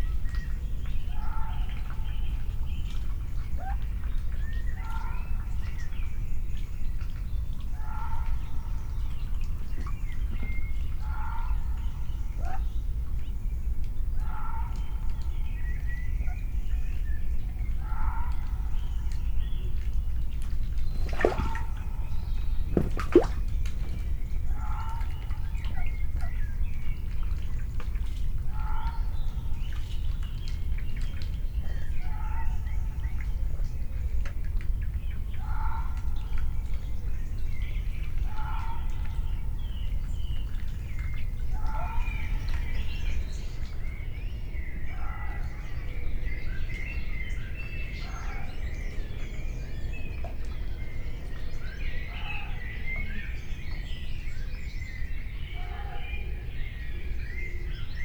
Pergola, Malvern, UK - Ducklings and Muntjac
Just before dawn and part of an overnight recording. In the forground a female mallard and her 6 ducklings vocalise and disturb the pond water. A muntjac calls in the background from the slopes of the Malvern Hills. 2 minutes from the end mice are heard running around the microphones and distant traffic begins the day. This is an attempt to use longer clips to provide an experience of the recording location.
MixPre 6 II with 2 Sennheiser MKH 8020s. The ducks are 10ft away and the muntjac half a mile from the microphones which are on a wooden deck at the edge of the garden pond.
England, United Kingdom